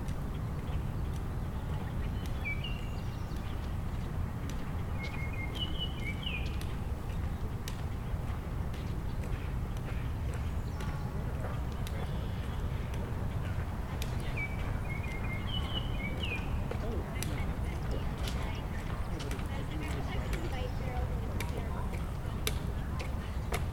People walking in the Nordheimer Ravine, one of Toronto's ravines made when the last ice age ended and Lake Algonquin drained.